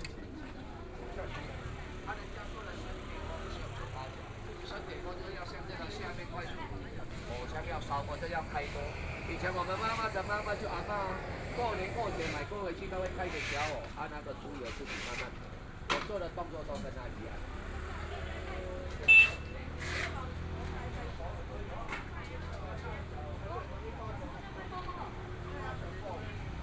集英里, Zhongshan Dist. - Walking through the market
Walking through the market, Traffic Sound, Binaural recordings, Zoom H4n+ Soundman OKM II
Taipei City, Taiwan, 6 February 2014